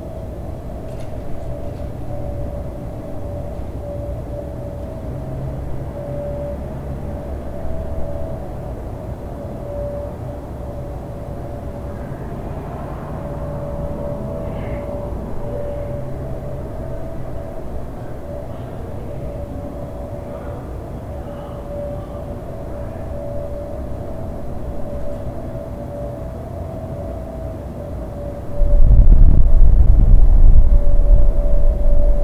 Crescent Heights, Calgary, AB, Canada - Really Deep Grate
There was a really deep grate that hummed a little so I tried jamming the recorder in but it didn't work. So I leaned it against the wall and tried my best to block the wind with a hat. Also, there were people arguing across the street so that was amusing as well
Zoom H4n Recorder